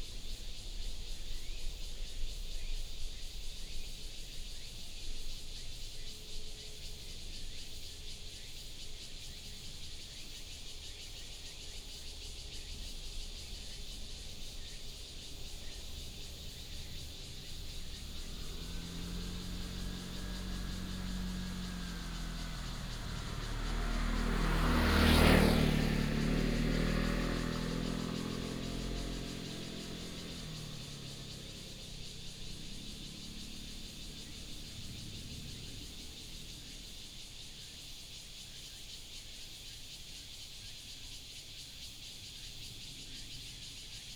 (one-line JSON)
{"title": "竹21鄉道, Guanxi Township - Cicadas and Birds", "date": "2017-09-12 12:28:00", "description": "Cicadas and Birds sound, Traffic sound, Binaural recordings, Sony PCM D100+ Soundman OKM II", "latitude": "24.79", "longitude": "121.12", "altitude": "156", "timezone": "Asia/Taipei"}